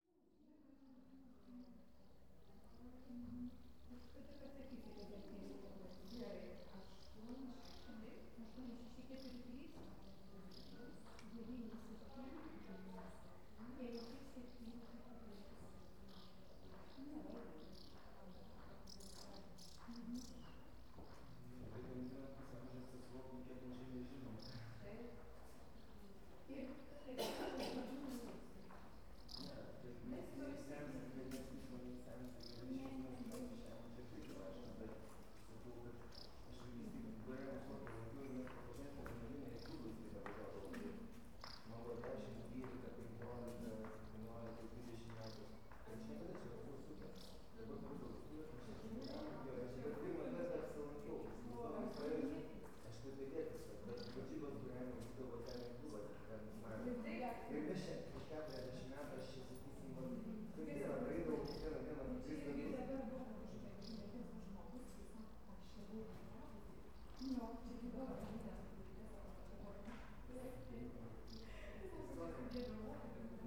Petrašiūnai, Lithuania, monastery courtyard
in the courtyard of Pazaislis monastery.